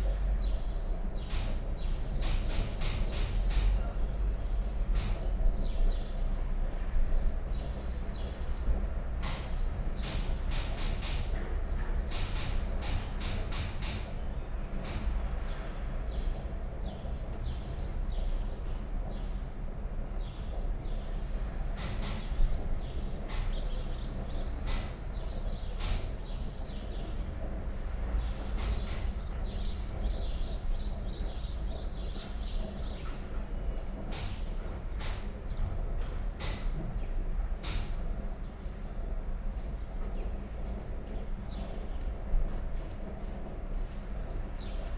Mladinska, Maribor, Slovenia - typing last textual fragment
rewriting 18 textual fragments, written at Karl Liebknecht Straße 11, Berlin, part of ”Sitting by the window, on a white chair. Karl Liebknecht Straße 11, Berlin”
window, typewriter, cafetera, birds, yard ambiance